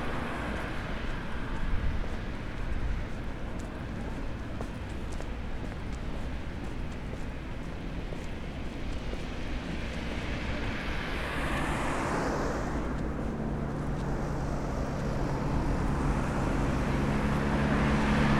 Lithuania, Utena, evening walk
walking through the city in the evening